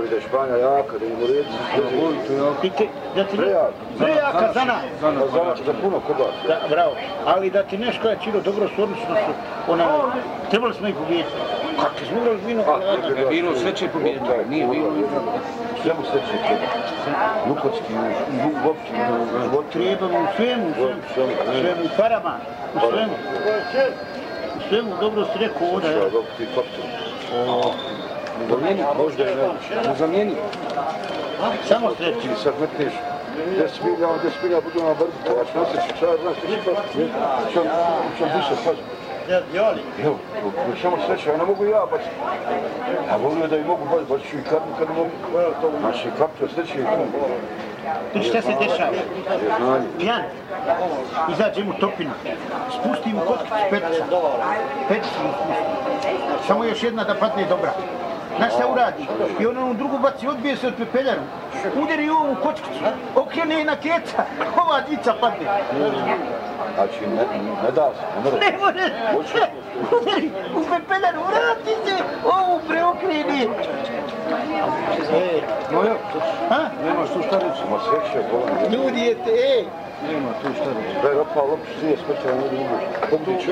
September 1996 - Bosnia after war. Recorded on a compact cassette and a big tape recorder !
In the center of Sarajevo and near the Baščaršija, people are happy. Everybody is in streets, drinking mint tea and discussing.